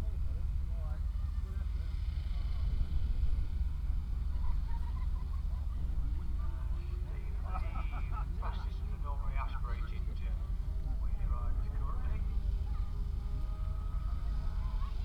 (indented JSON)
{
  "title": "Glenshire, York, UK - Motorcycle Wheelie World Championship 2018 ...",
  "date": "2018-08-18 14:25:00",
  "description": "Motorcycle Wheelie World Championship 2018 ... Elvington ... 1 Kilometre Wheelie ... open lavalier mics clipped to a sandwich box ... blustery conditions ... positioned just back of the timing line finish ... all sorts of background noise ...",
  "latitude": "53.93",
  "longitude": "-0.98",
  "altitude": "16",
  "timezone": "Europe/London"
}